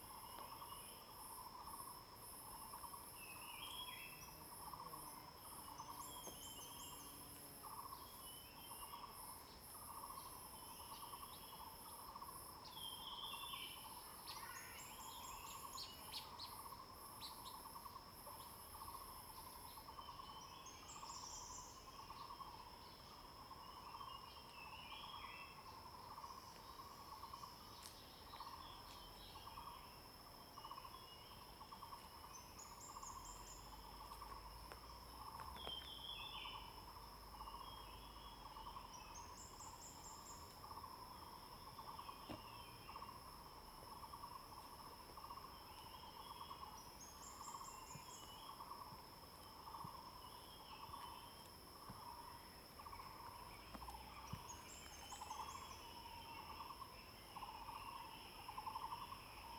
Birds singing, Bird sounds
Zoom H2n MS+ XY
Yuchi Township, 華龍巷43號